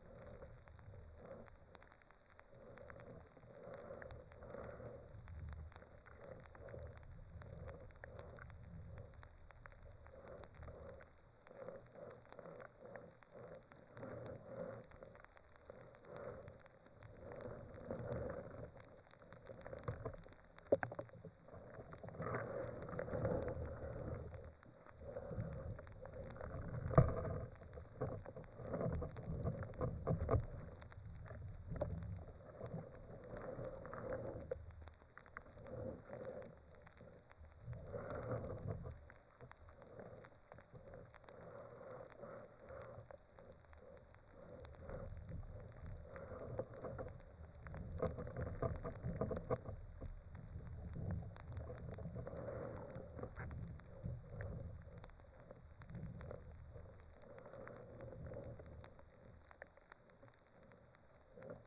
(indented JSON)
{
  "title": "Lithuania, Utena, Polystyrene foam on water",
  "date": "2013-05-05 17:05:00",
  "description": "a piece polystyrene foam laying on water. recorded with contact microphones",
  "latitude": "55.52",
  "longitude": "25.63",
  "altitude": "124",
  "timezone": "Europe/Vilnius"
}